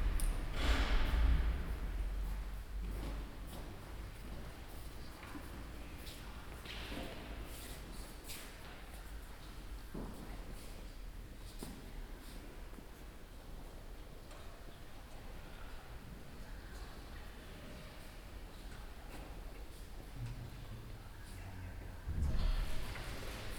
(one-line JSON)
{"title": "St Josef, Hamm, Germany - last piece from the organ noon lock-down", "date": "2020-04-12 11:55:00", "description": "inside a few people dispersed across empty benches, last piece from the organ, the organist packs up and leaves… noon, lock-down...", "latitude": "51.67", "longitude": "7.80", "altitude": "65", "timezone": "Europe/Berlin"}